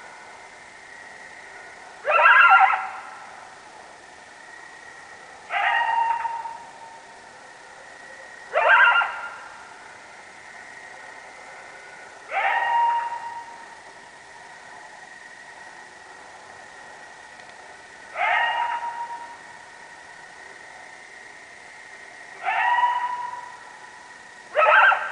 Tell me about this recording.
Coyotes howling at night in Yotatiro/zoom h4n from a far distance/processed a bit with Adobe Soundbooth